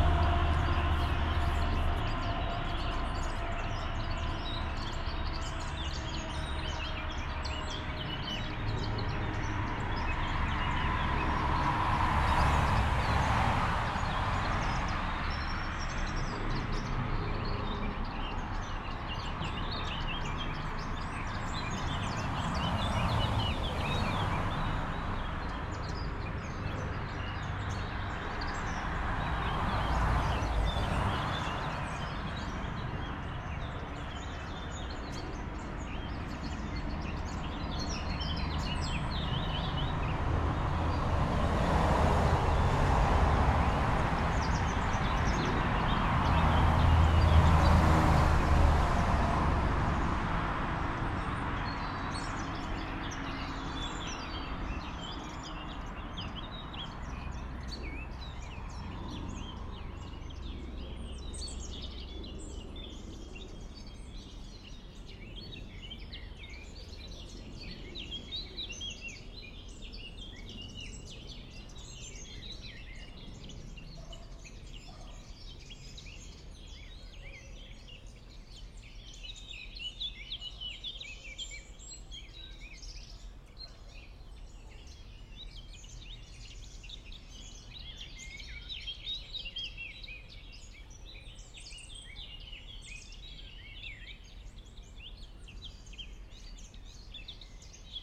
a bridge between the woods and the traffic: the recording of a short spring morning walk.
Vicenza, Veneto, Italia, April 2022